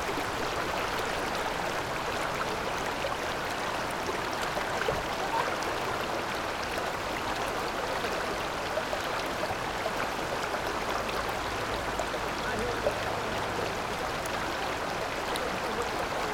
Linquan Lane, Taipei - the streams